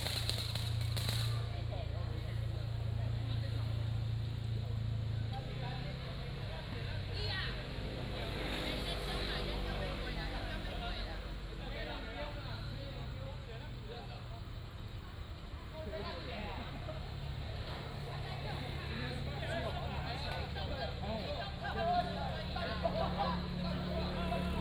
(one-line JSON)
{"title": "本福村, Hsiao Liouciou Island - Near the grill", "date": "2014-11-01 19:46:00", "description": "in front of the temple, Near the grill", "latitude": "22.35", "longitude": "120.38", "altitude": "33", "timezone": "Asia/Taipei"}